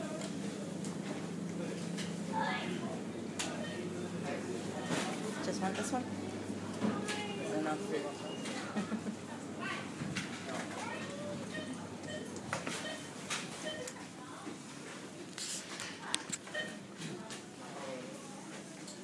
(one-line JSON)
{"title": "Husavik, Iceland grocery store", "date": "2010-07-21 14:45:00", "description": "shopping in Kasko grocery store in Husavik, Iceland", "latitude": "65.40", "longitude": "-13.67", "altitude": "1", "timezone": "Atlantic/Reykjavik"}